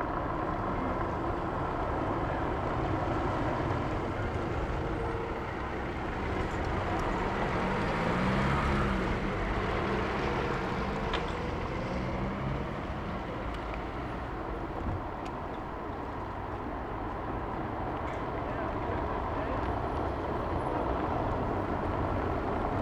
Berlin: Vermessungspunkt Maybachufer / Bürknerstraße - Klangvermessung Kreuzkölln ::: 18.01.2011 ::: 16:51